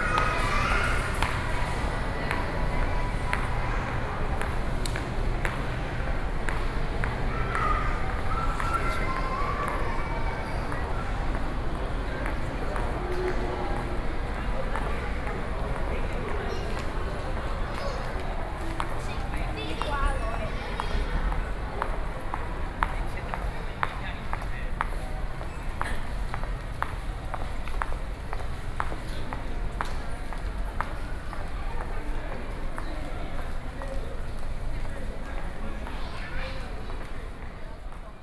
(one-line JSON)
{"title": "New Taipei City, Taiwan - At the station mall", "date": "2012-11-10 14:58:00", "latitude": "25.01", "longitude": "121.46", "altitude": "20", "timezone": "Asia/Taipei"}